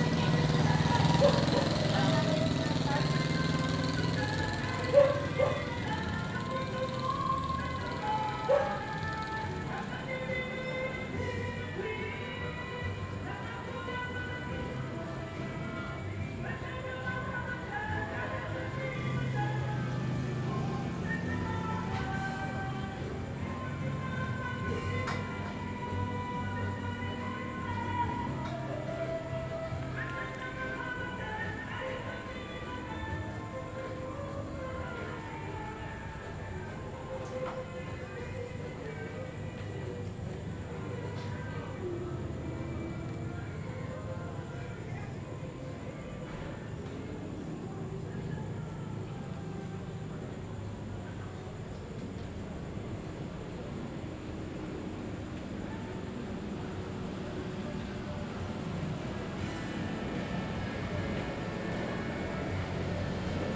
San Francisco, Biñan, Laguna, Filippinerna - Home-karaoke and neighbourhood sunday evening
There is a home-karaoke party in the neighbourhood. People pass by by walking, in tricycles and in cars by the balcony from where I captured these sounds on a sunday evening. WLD 2016
Biñan, Laguna, Philippines, 17 July 2016